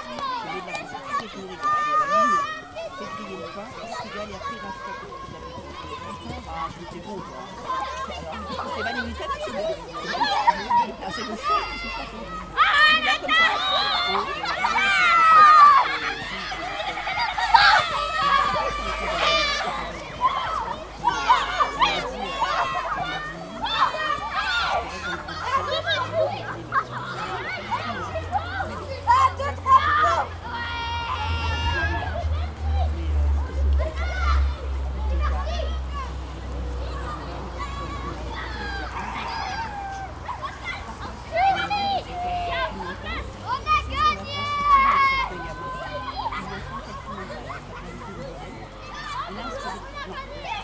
Champs à Nabord - la cour
Cornimont, France, February 2013